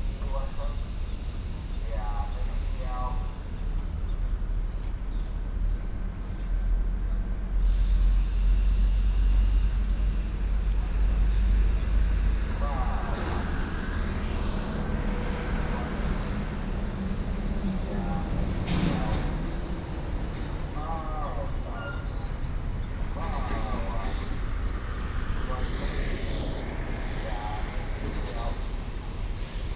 仙洞里, Zhongshan District, Keelung City - by the road
Traffic Sound, by the road, Vendors broadcast audio, Container transport zone
Keelung City, Taiwan, 2 August 2016